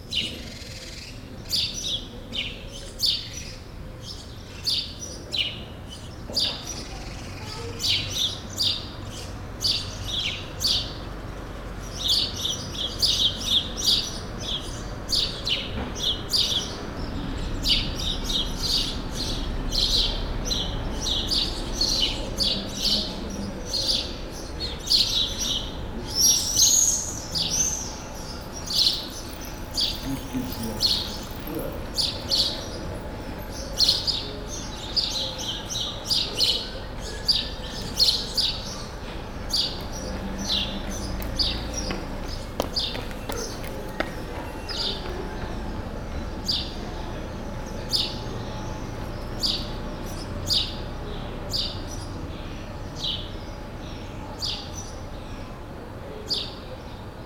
Morning ambience on the center of a small village, a very quiet morning.